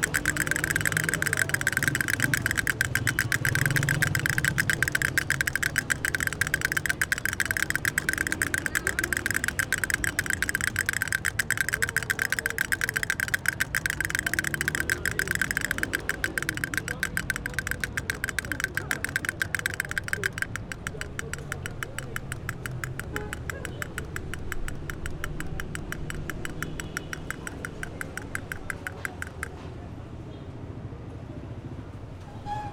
Tehran Province, Tehran, Enghelab, St, Tehran University - BRT 1, Iran - Toy seller